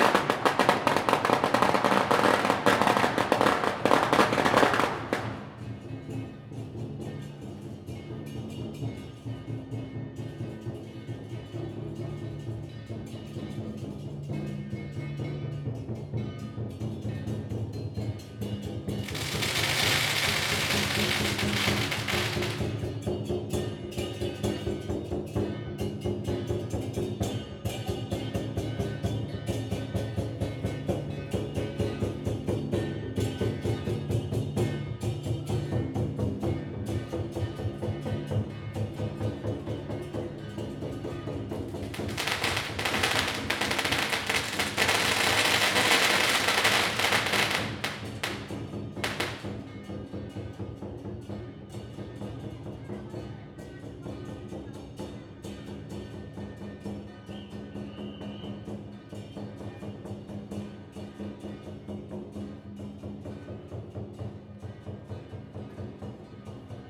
大仁街, Tamsui District, New Taipei City - Gongs and drums
Gongs and drums, Firecrackers
Zoom H2n MS+XY
New Taipei City, Taiwan, 28 February 2016